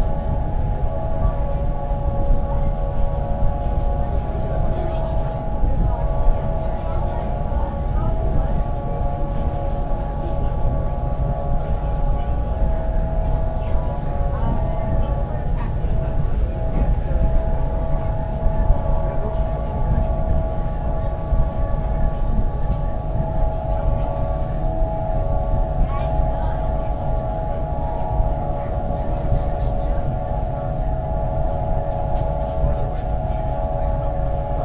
Ferry Harp, Central Business District, Seattle, WA, USA - ferry harp
Crouching behind a short wall, shielding myself from the wind, while listening to the wind make music through a grated platform which was affixed toward the bow of the Bremerton Ferry, Seattle, WA.
Sony PCM-MD50
13 August 2013, 13:30